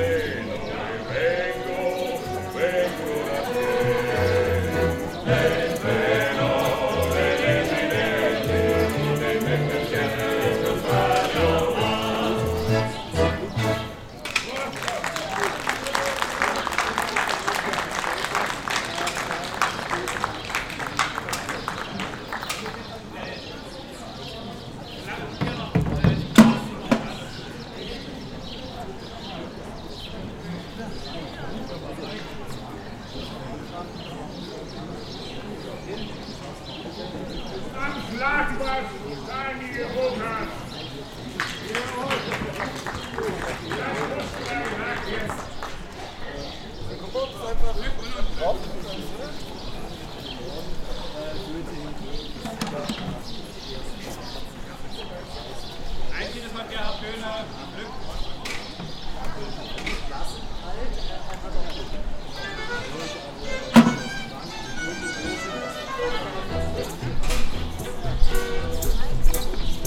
street music, city tour lecture, horse carriage, people (zoom h6)